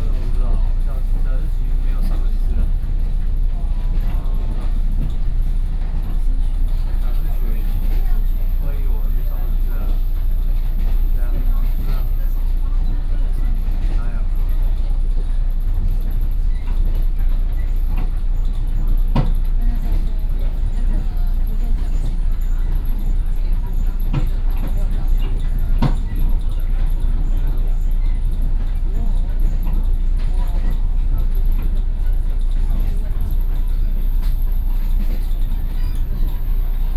inside the Trains, Sony PCM D50 + Soundman OKM II